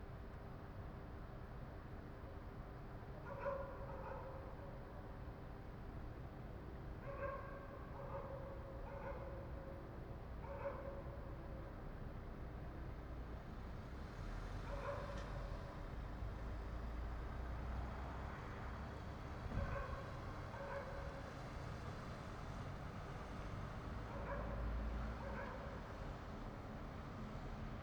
Șoseaua Nicolae Titulescu, București, Romania - Quiet night during lockdown
Quiet night time, in a usually very crowded intersection. Dogs barking and an ambulance passing by.
Municipiul București, România, 1 May 2020, 22:00